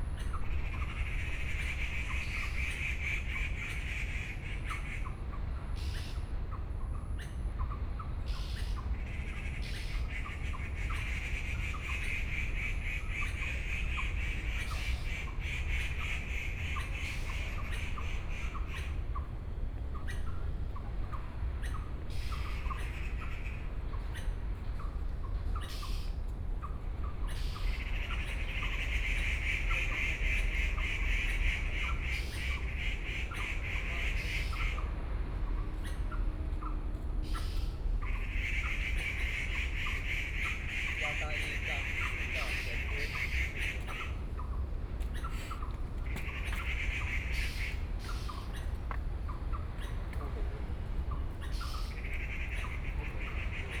Birdsong, Sony PCM D50 + Soundman OKM II
Taipei City, Taiwan, 13 September